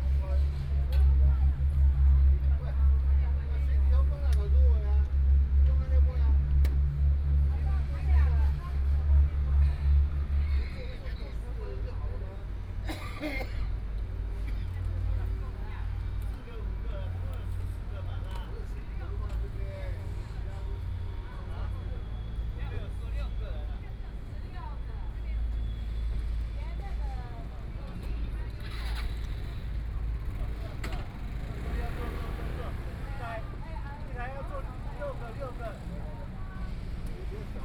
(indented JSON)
{
  "title": "Guolian Rd., Hualien City - Outside the station",
  "date": "2014-01-18 14:14:00",
  "description": "Tourist, Traffic Sound, Train sounds, out of the station, Binaural recordings, Zoom H4n+ Soundman OKM II",
  "latitude": "23.99",
  "longitude": "121.60",
  "timezone": "Asia/Taipei"
}